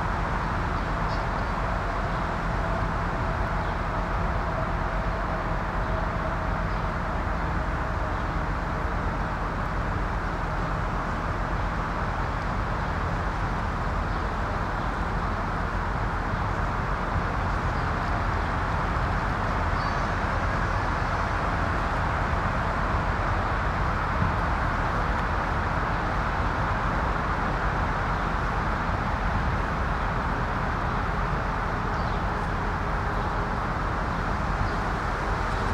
Aire du Héron Cendré, Dambron, France - Ambience along the highway
Tech Note : Sony PCM-M10 internal microphones.
France métropolitaine, France, August 2022